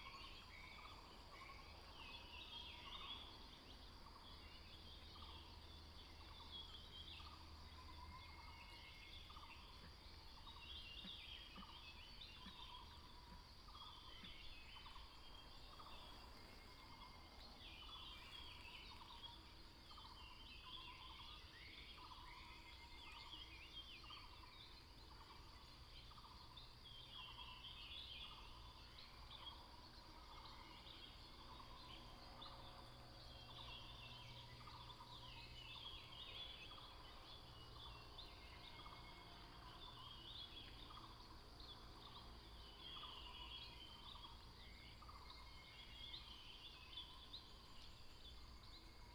Bird sounds, Crowing sounds, Morning road in the mountains